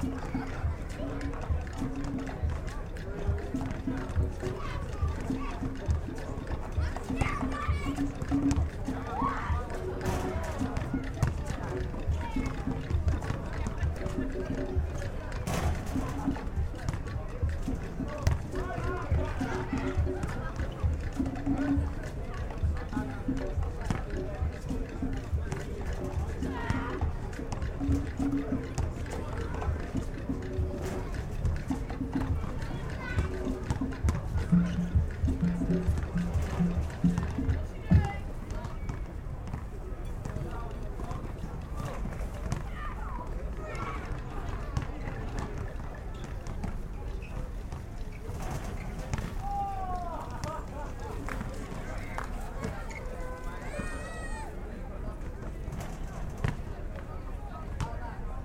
Brighton Beach - Basketball and African Percussion
African percussion and basketball, summer on Brighton seafront.